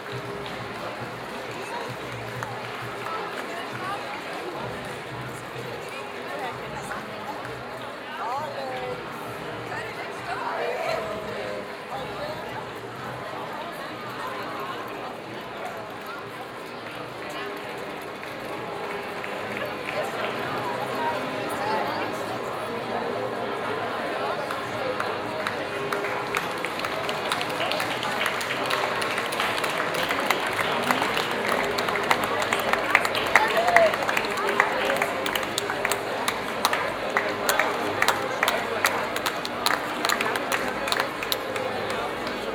Aarau, Maienzug, Rathausgasse, Schweiz - Maienzug 2
Continuation of the Maienzugs. Due to noise there are three cuts in this recording. You hear first applause for the brassband of Maienzug 1 and their version of Michael Jackson's Thriller, other brass bands (one quote Smoke on the Water), and again the Burschenschafter with their strange rituals of singing and stamping.
Aarau, Switzerland, 1 July 2016